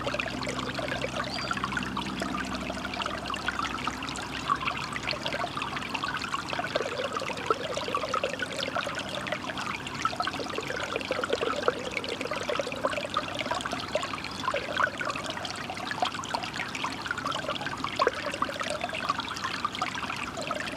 Colchester, Colchester, Essex, UK - Babbling Brook
made in friday woods on Saturday 27th of February 2015. Cold day, little bit of wind around 3.45pm
February 2015